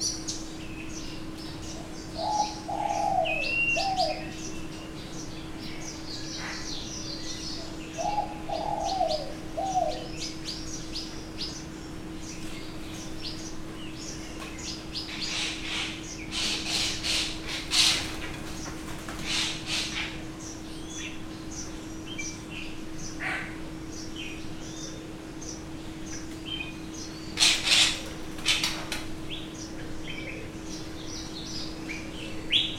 weltvogelpark walsrode, paradieshalle - paradieshalle, september 2010